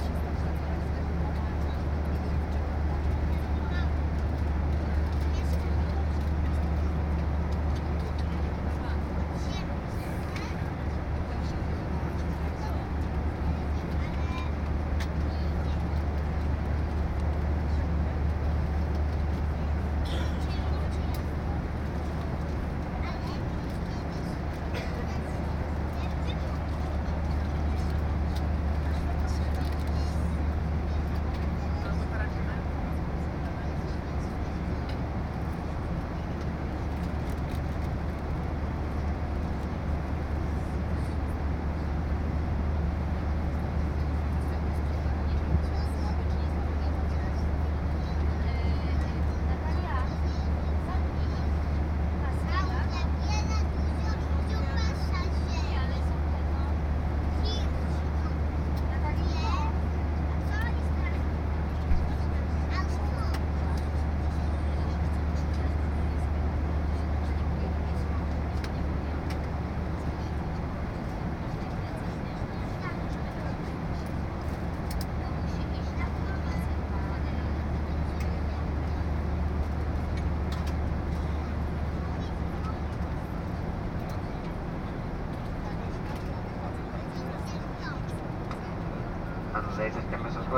Airport, Alicante, Spain - (02) Inside airplane during landing
Recording of a landing in Alicante. Ryanair flight from Krakow.
Recorded with Soundman OKM on Zoom H2n.